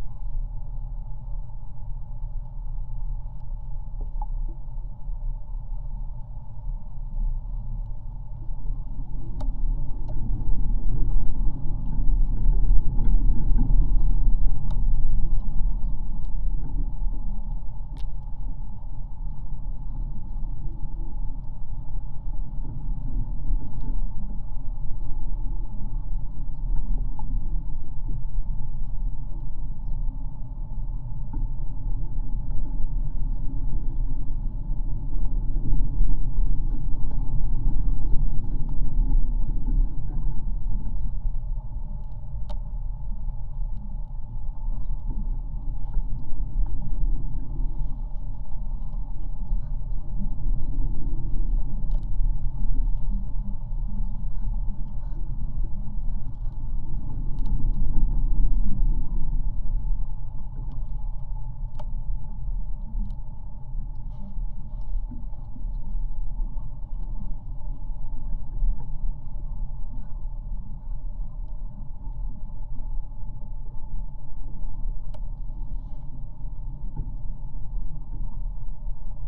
two-three days with minus degree temperature and there is tiny ice on riverside. contact microphones discover the drone
Utena, Lithuania, 23 February, 14:15